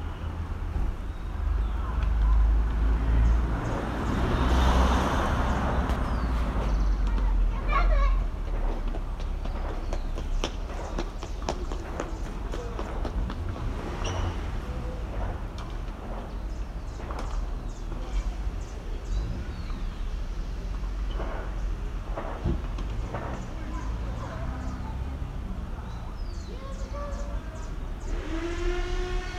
Cl., Bogotá, Colombia - Santa Ana park
In this audio you will hear how the Santa Ana park sounds in the afternoon with sounds of birds, cars, children screaming and running, in the distance you can also hear a game of tennis and shots from the practice site of the Colombian army
Región Andina, Colombia